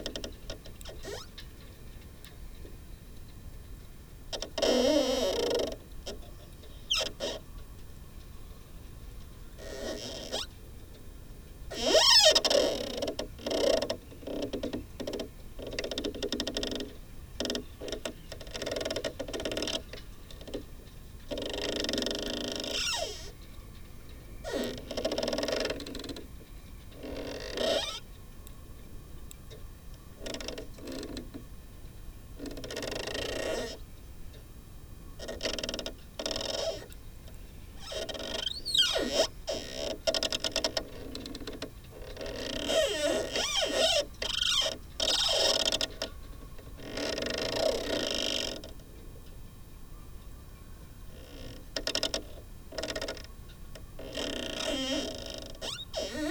contact mic on plastic box
the city, the country & me: july 9, 2011
Workum, The Netherlands, 9 July 2011, 13:32